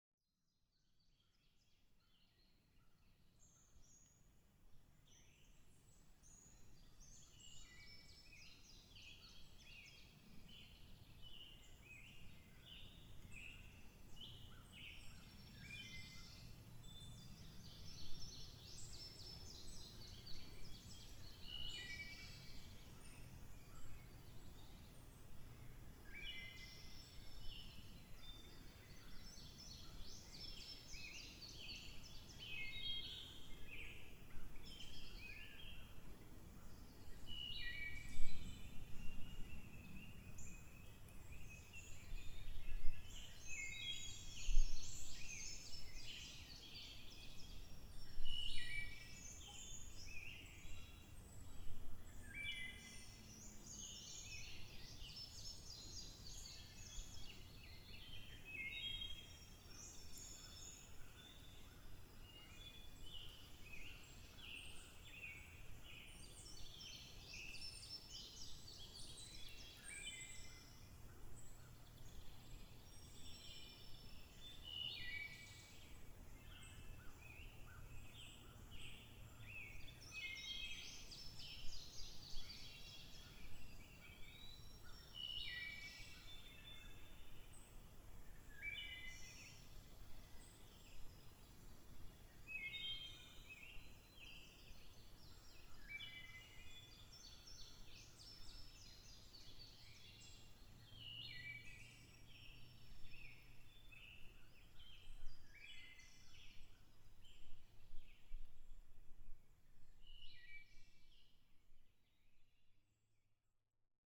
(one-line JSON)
{"title": "Frances Slocum Cemetery, County Rd 900 S, Wabash, IN, USA - Frances Slocum Cemetery, late afternoon", "date": "2020-07-23 18:15:00", "description": "Sounds heard in the woods behind Francis Slocum Cemetery. Recorded using a Zoom H1n recorder. Part of an Indiana Arts in the Parks Soundscape workshop sponsored by the Indiana Arts Commission and the Indiana Department of Natural Resources.", "latitude": "40.70", "longitude": "-85.91", "altitude": "252", "timezone": "America/Indiana/Indianapolis"}